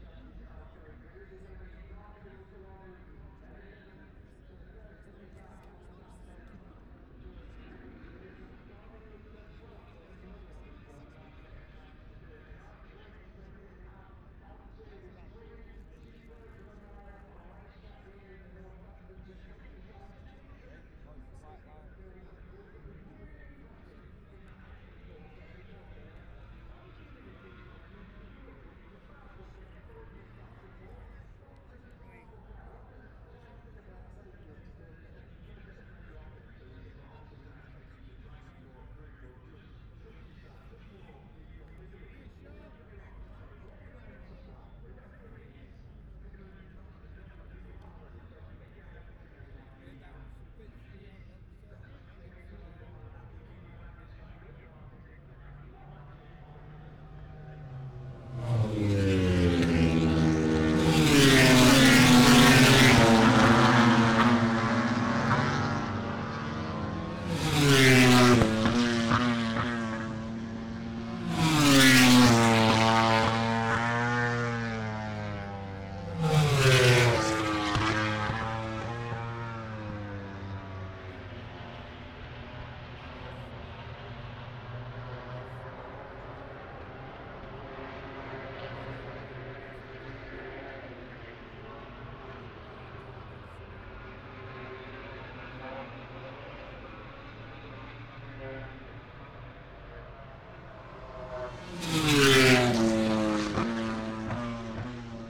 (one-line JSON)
{"title": "Silverstone Circuit, Towcester, UK - british motorcycle grand prix ... 2021", "date": "2021-08-28 14:10:00", "description": "moto grand prix qualifying one ... wellington straight ... dpa 4060s to MixPre3 ...", "latitude": "52.08", "longitude": "-1.02", "altitude": "157", "timezone": "Europe/London"}